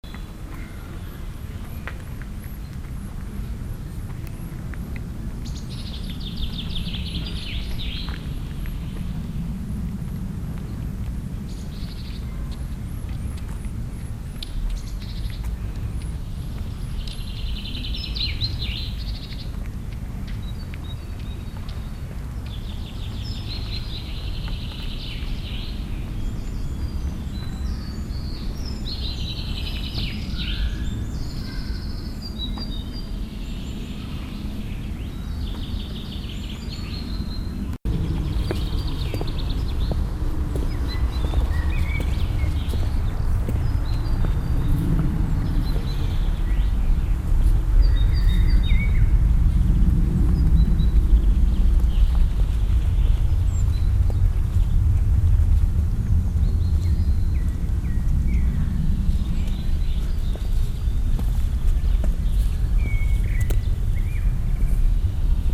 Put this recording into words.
international soundmap : social ambiences/ listen to the people in & outdoor topographic field recordings